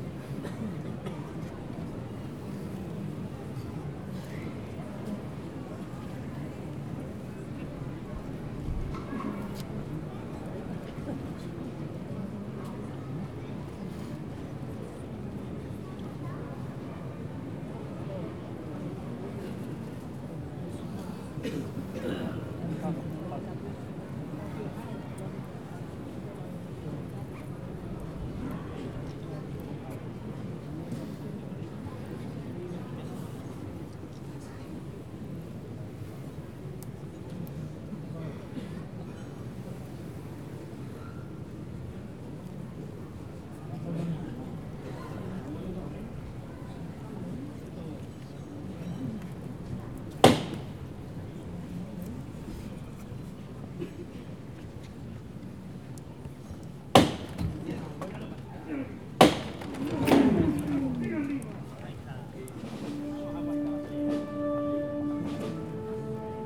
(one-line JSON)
{"title": "Plaza Molviedro, Sevilla, Spain - Semana Santa 2018 - Hermandad de la Soledad de San Buenaventura", "date": "2018-03-31 19:45:00", "description": "Semana Santa 2018. Brotherhood - Hermandad de la Soledad de San Buenaventura. The recording start as the Paso carrying Mary enters the square and is set down in front of the open doors of Capilla del Mayor Dolor to say hello to the Pasos within. As well as the band at 4:24 you hear a woman serenade (sing a siete) the Paso from a balcony, a few seconds beofre that you hear a knock, which is the signal to set the Paso down. At 9:17 you hear a knock, this is the signal to get ready to lift the Paso, at 9:27 you hear them lift it, and then move on.\nRecorder - Zoom H4N.", "latitude": "37.39", "longitude": "-6.00", "altitude": "6", "timezone": "Europe/Madrid"}